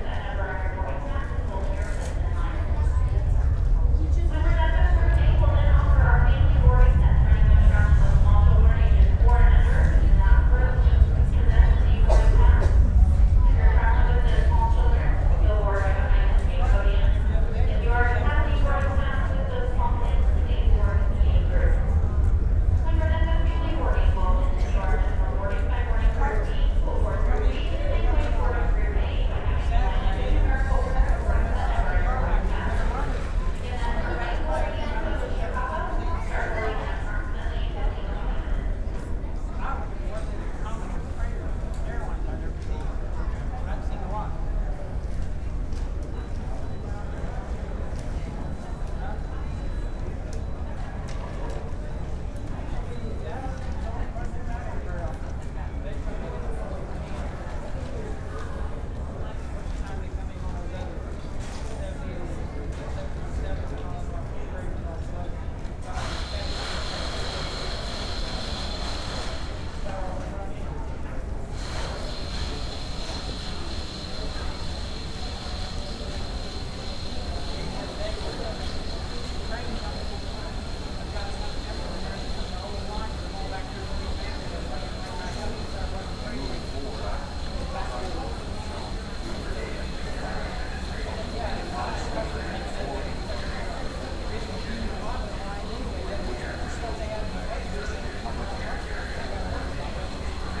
Indianapolis Airport - Indianapolis Departure Lounge
Delayed at Indianapolis Airport.